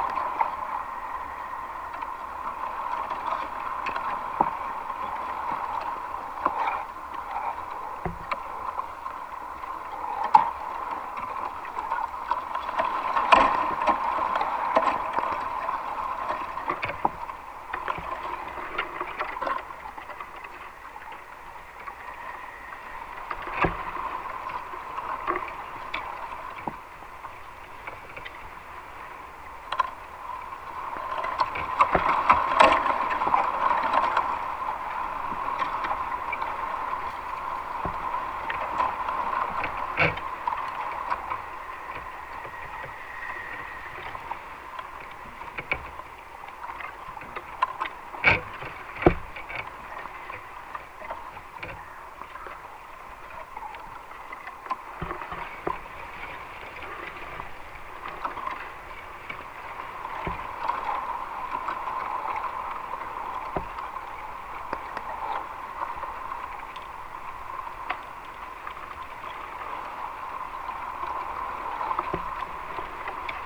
8 bamboos sampled in a wild stand of giant bamboo...coastal sea breeze influence under...flanked by heavy industry tourism roading infrastructure customary to contemporary Korea
대한민국